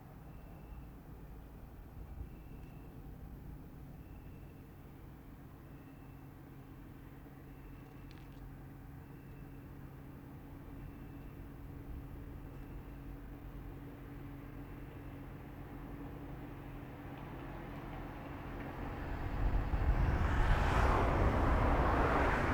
Strada Nicolae Milescu Spătaru, Chișinău, Moldova - The unfinished bridge at Ciocana
The recording was done on the top of a unfinished bridge at the end of the city Chisinau. On that bridge often young folks come and hung around. In the recording are sounds of all sorts of cars, people talking here and there and some crickets a little later on. The recording was done with Zoom H6 (SSH-6).
September 2018